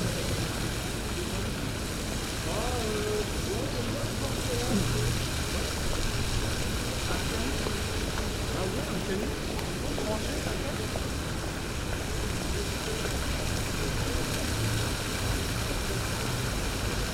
Pl. Louis Pradel, Lyon, France - Jet d'eau
Sur les escaliers de la place Pradel démarrage du jet d'eau de la fontaine sculpture. Les passants.